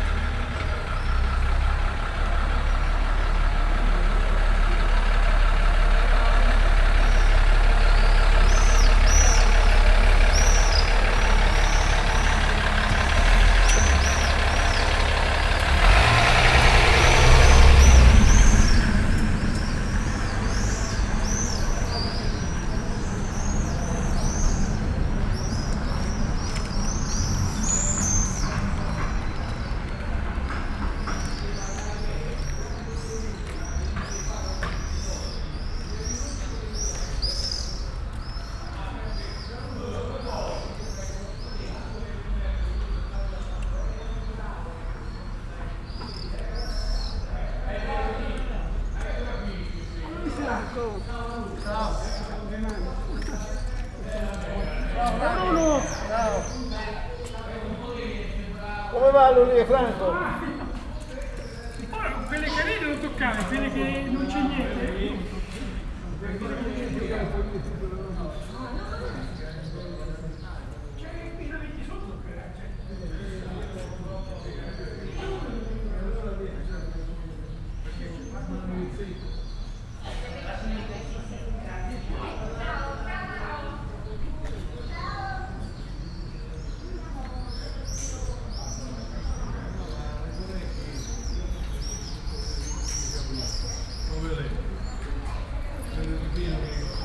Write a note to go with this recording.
Ambience of the village, people chatting, car passing, birds. (Binaural: Dpa4060 into Shure FP24 into Sony PCM-D100)